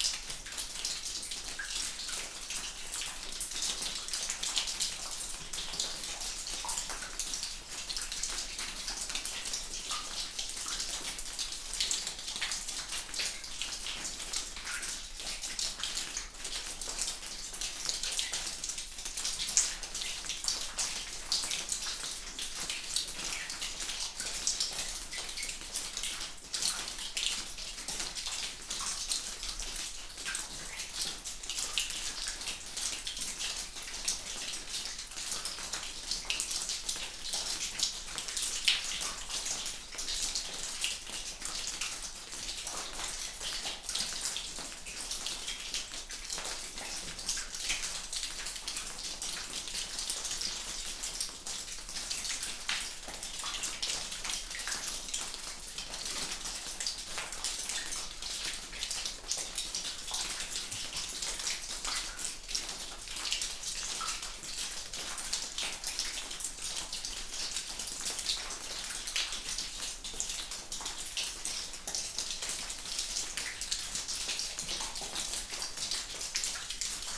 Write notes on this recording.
This was recorded in so called Russian cave. Recorded with MixPre II and LOM Uši Pro, AB Stereo Mic Technique, 50cm apart. History: RUSSIAN CAVE, There is a multitude of natural karst caves in the area of the Kras. During the time of the Isonzo Front, several of them were arranged by the caving-and-construction detachment of the Corps VII of the Austro-Hungarian Army to serve military purposes. One of the caves that was initially used for ammunition storage was subsequently called the Russian Cave, because later on, the Russian POWs were lodged in it. They had to live there in unbearable conditions. According to the estimation of historians, about 40,000 Russian POWs, captured on the Eastern Front, were present during the First World War on the territory of present-day Slovenia. About 15,000-20,000 of them were confined on the broader area of the Kras.